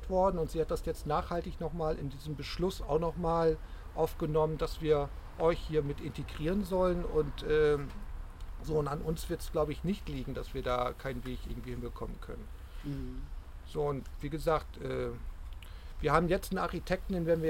GartenKunstNetz, Eifflerstraße. - Pläne der STEG für den Kauf und die Bebauung des Grundstücks
Das Grundstück in der Eifflerstraße ist von der Finanzbehörde Hamburg als Kreativimmobilie ausgeschrieben worden. Kurt Reinke (STEG) erläutert dem Gartenkunstnetz das Kaufangebot und den Bebauungsplan der STEG.
Schanzenviertel